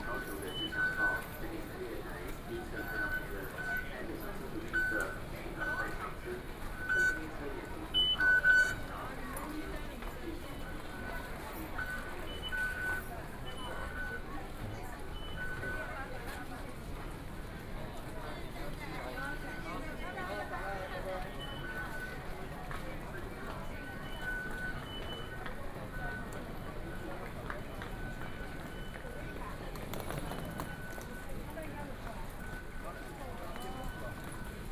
Taipei Main Station, Taiwan - Platform
walking in the Platform, Zoom H4n+ Soundman OKM II
12 May 2013, 19:25